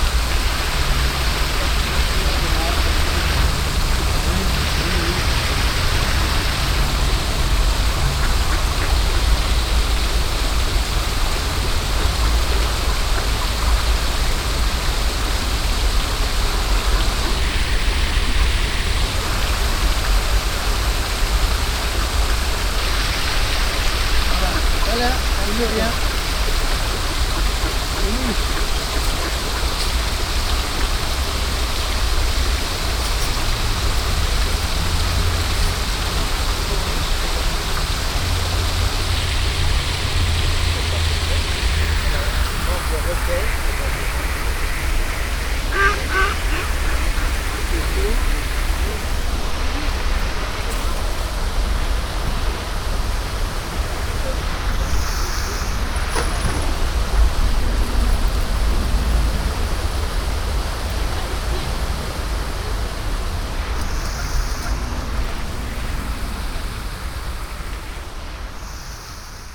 luxembourg, city park, fountains
Standing on a wooden bridge between two fountains. The sound of the water spraying and floating, nearby two old men feeding the ducks are talking, the ducks cackle two bicycles cross the wooden bridge.
international city scapes - topographic field recordings and social ambiences